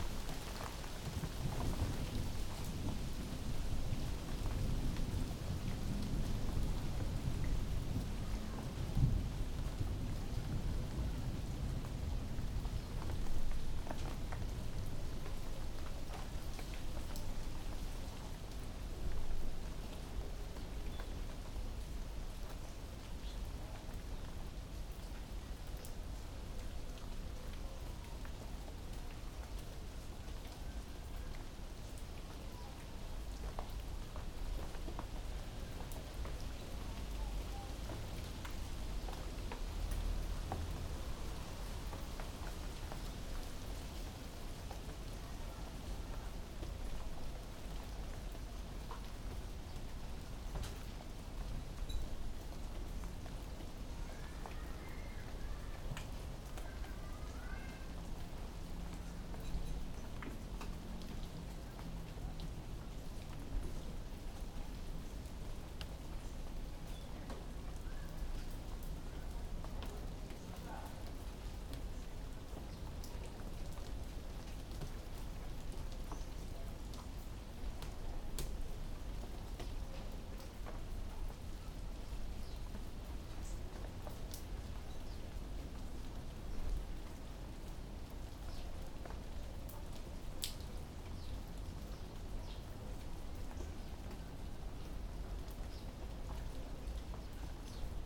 Goldman Cl, London, UK - Heavy rain with spots of rumbling thunder
sudden torrential rain following a hot dry spell.
8040 stereo pair into Mixpre 10 II
Greater London, England, United Kingdom, 17 August, ~17:00